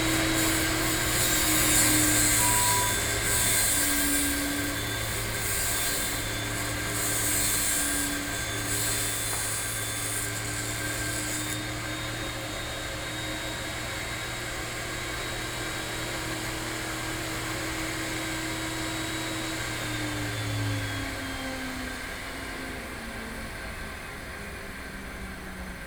台北市立美術館, Taiwan - Environmental sounds
Birdsong, Traffic Sound